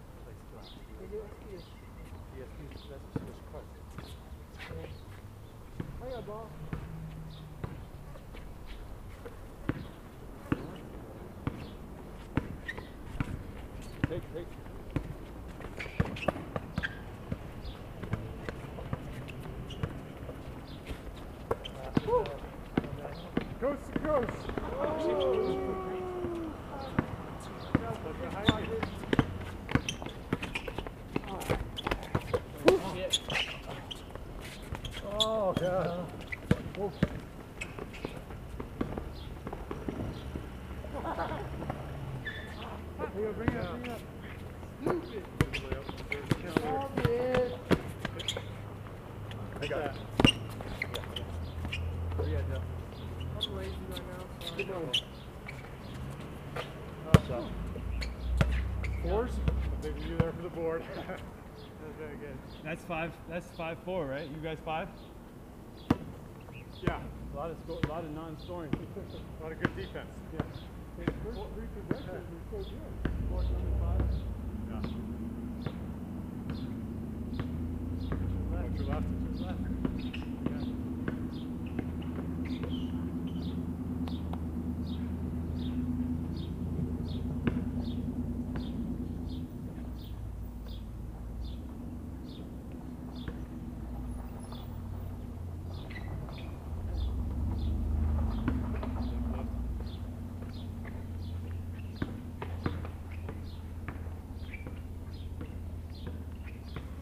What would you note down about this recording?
and now, finishing up at PSP, a game of 4 on 4 develops.